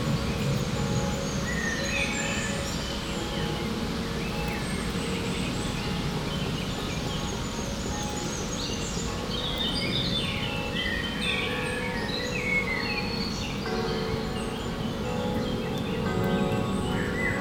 La Hulpe, Belgique - Faraway bells
Into the woods, birds singing, distant noise from the La Hulpe bells and a lot of traffic drones.
27 May 2017, 17:45